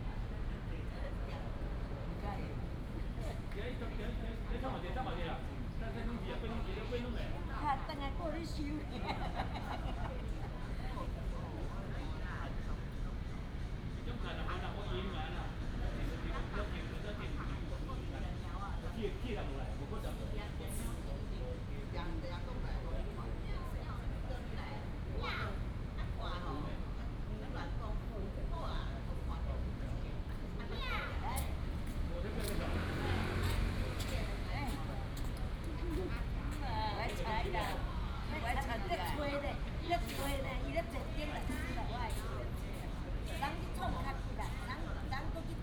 in the Park, A group of old people chatting